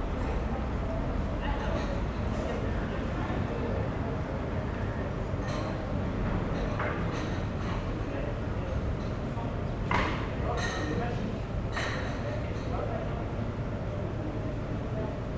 Rue des Bains, Aix-les-Bains, France - Rue piétonne
C'est ma rue préférée d'Aix-les-bains la rue des bains dans la zone piétonne, elle est souvent ventée, j'ai posé l'enregistreur en face du café des bains, pour capter l'ambiance de la rue et ses alentours.